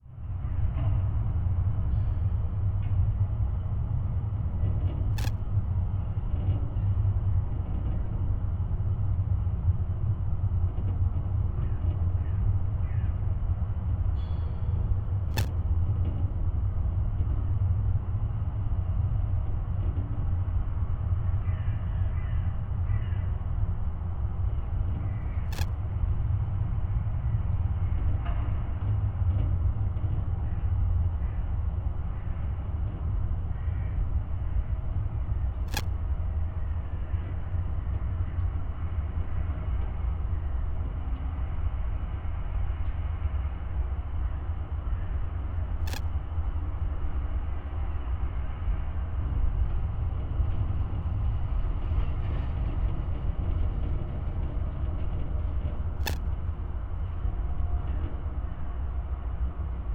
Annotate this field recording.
sound of a barrier fence, recorded with contact mics. every 10 sec a strange signal can be heard. it seems the nearby radar tower ist still in use. (PCM D50, DIY contact mics)